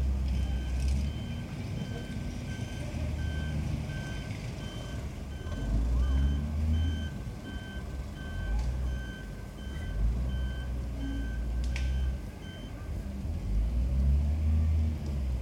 Ha'Carmel, Tel Awiw, Izrael - muggy soiree at Shuk Ha'Carmel I
muggy soiree at Shuk Ha'Carmel
July 2015
no-cut